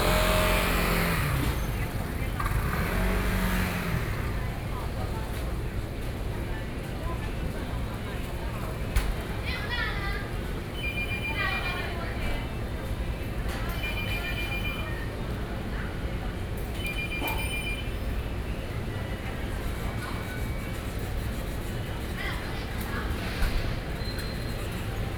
Street corner, In front of the Restaurant, Sony PCM D50 + Soundman OKM II